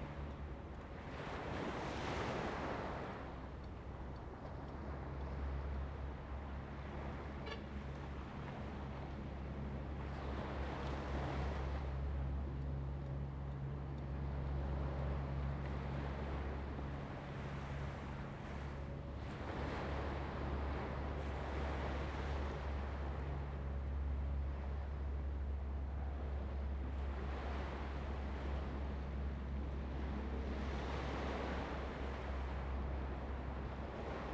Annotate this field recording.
At the beach, Sound of the waves, Zoom H2n MS+XY